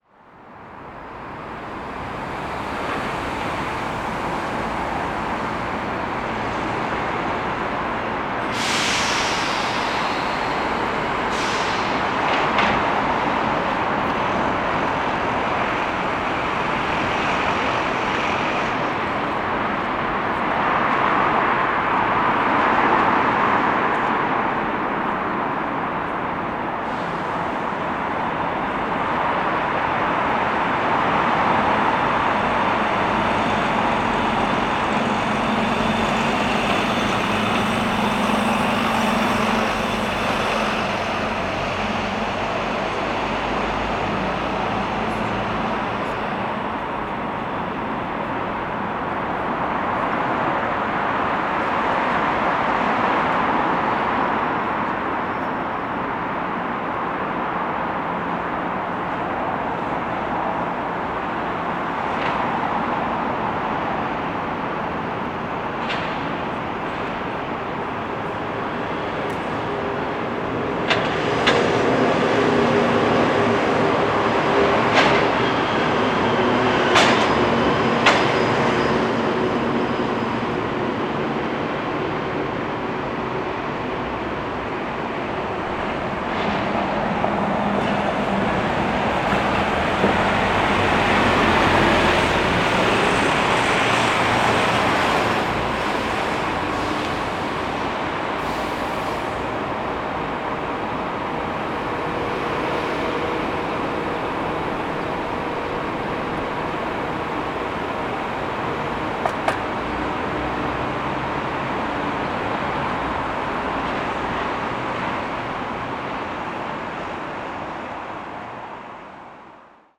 The leaning clock of Belfast which would have passers from the Lagan River or the City Centre, had very few people completing their government-issued daily activity. There were more cars and buses then there were people.